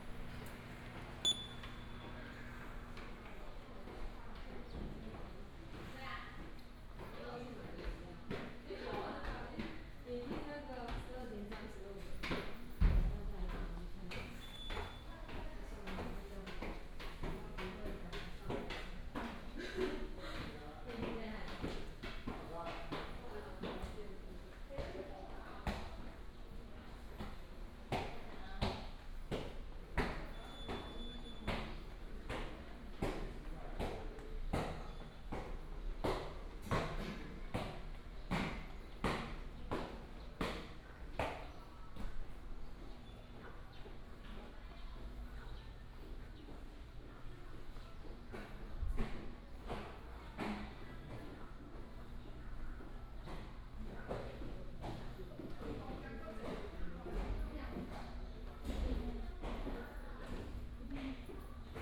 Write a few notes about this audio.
in the station platform, Frog sound